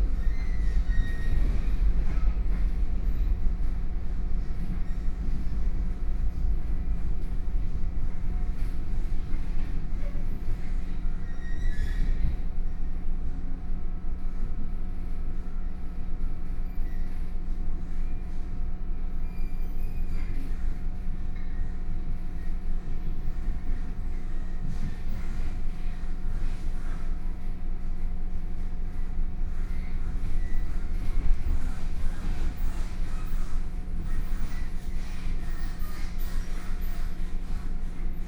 Dongshan Township, Yilan County - Local Train
from Luodong Station to Xinma Station, Zoom H4n+ Soundman OKM II
Dongshan Township, Yilan County, Taiwan, 7 November 2013, 10:31